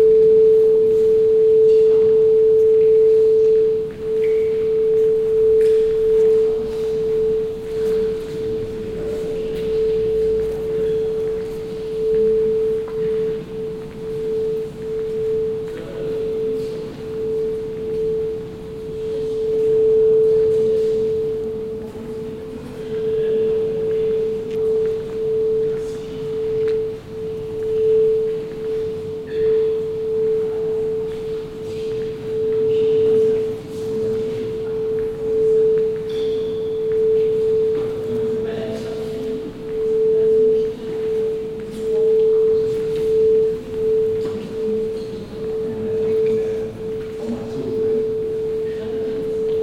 Berlin, Hamburger Bhf, exhibition - berlin, hamburger bhf, exhibition
Inside the left wing of the exhibition building on the first floor. The sound of the Ikeda exhibition db and visitors walking around - here the white room.
soundmap d - social ambiences, art places and topographic field recordings